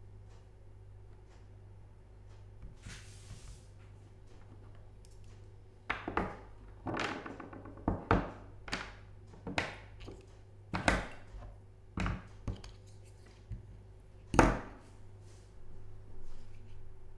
Kitchen Clock LNG, Experimental Binaural 61